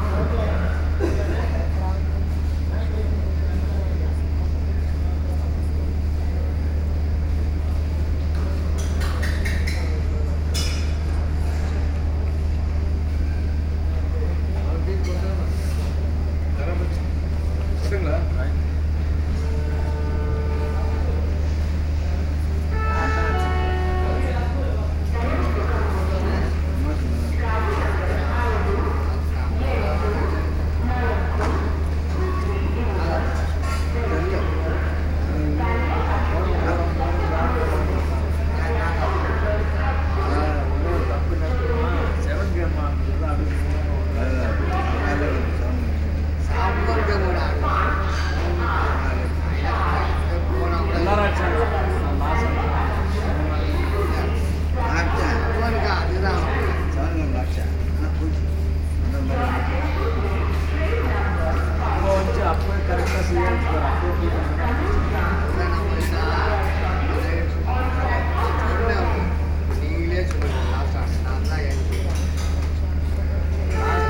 India, Karnataka, Bangalore, railway station, train